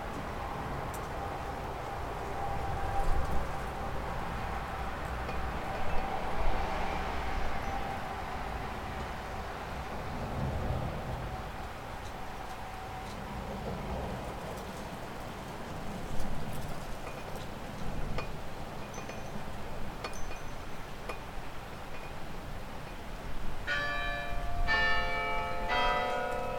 Alte Str., Forbach, Deutschland - Black Forest village at midnight
Langenbrand, a small village in the northern black forest, recording time 1 minute before and after midnight, wind, metal, wood and glass sounds, church bells